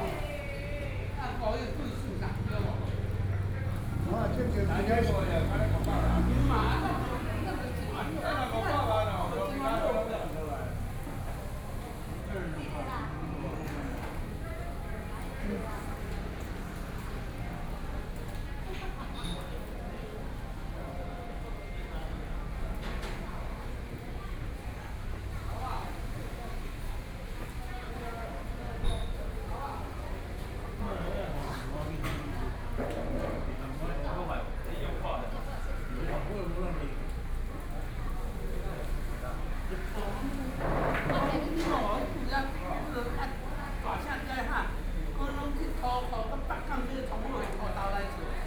Nanfang-ao, Yilan county - In the temple
In the temple, 're Chatting while eating old people, Zoom H4n+ Soundman OKM II
Suao Township, 陽明巷39-43號